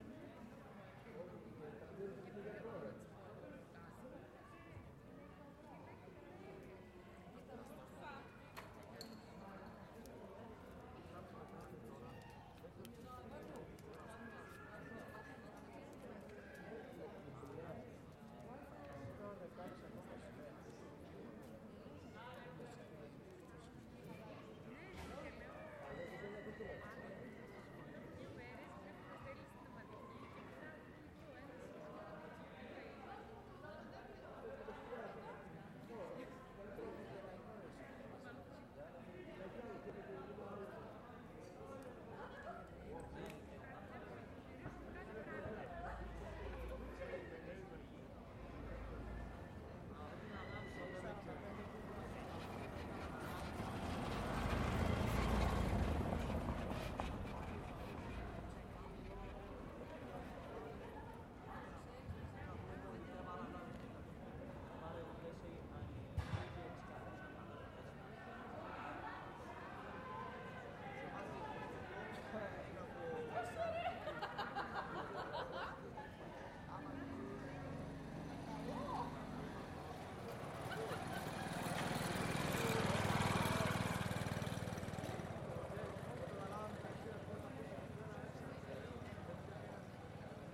Αντίκα, Ξάνθη, Ελλάδα - Metropolitan Square/ Πλατεία Μητρόπολης- 20:45
People talking distant, light traffic.